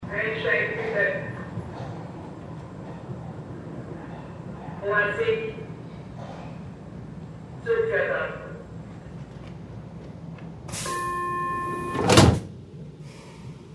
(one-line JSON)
{"title": "vienna, Rathausplatz, U Bahn Station Ansagen - wien, rathausplatz, u bahn station ansagen", "date": "2008-05-20 23:25:00", "description": "cityscapes, recorded summer 2007, nearfield stereo recordings\ninternational city scapes - social ambiences and topographic field recordings", "latitude": "48.21", "longitude": "16.36", "altitude": "197", "timezone": "Europe/Berlin"}